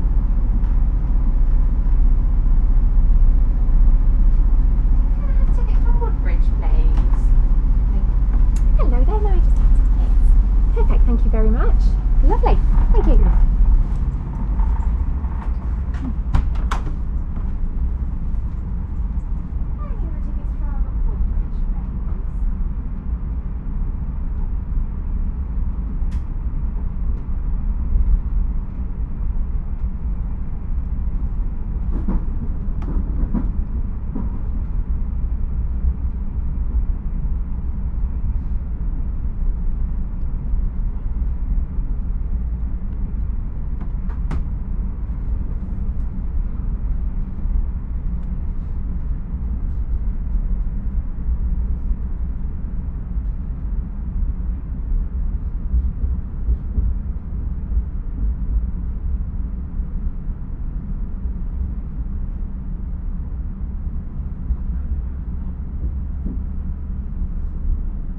Quiet Train in Suffolk, UK
Another experiment with long recordings. This one is a local train ride in real time through sleepy Suffolk from Woodbridge to Saxmundham. There are voices, announcements and train sounds ending with passengers leaving the train and suitcases being trundled along the pavement in Saxmundham.
Recorded with a MixPre 6 II and two Sennheiser MKH 8020s in a rucksack.
8 February, ~14:00